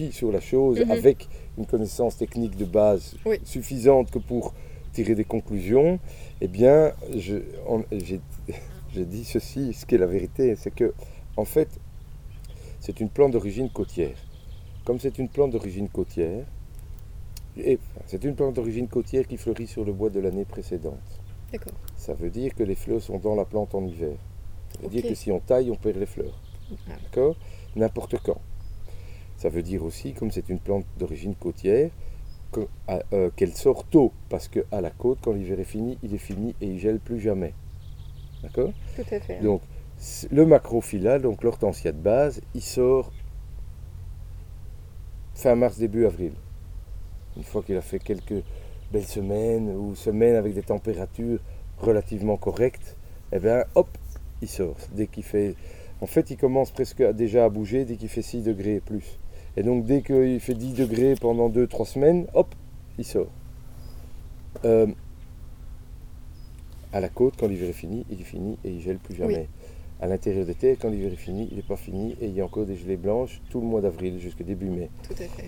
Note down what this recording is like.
Thierry de Ryckel speaks about his passion and work. He's an Hydrangeas cultivator. His plant nursery has 30.0000 hydrangeas and hemerocalles.